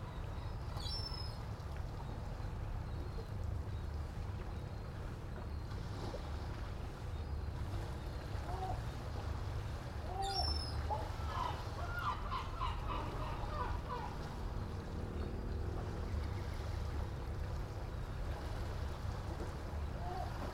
from/behind window, Novigrad, Croatia - early morning preachers
6 September 2012